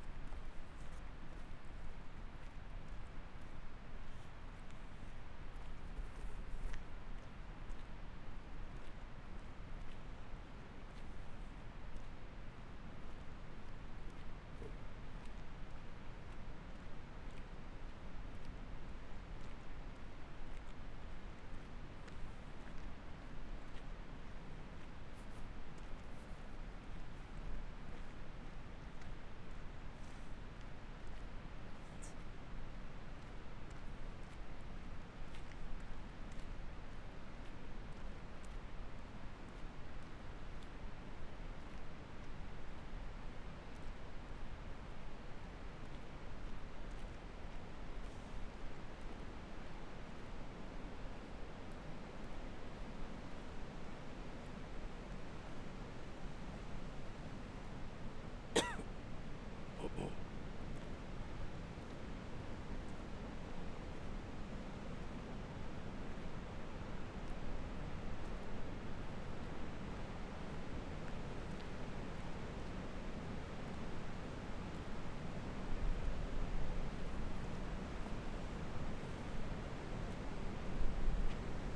Willow River State Park - New Dam - Walking to Willow River Dam
Walking from the parking lot to the top of the New Willow River Dam and then walking down to the river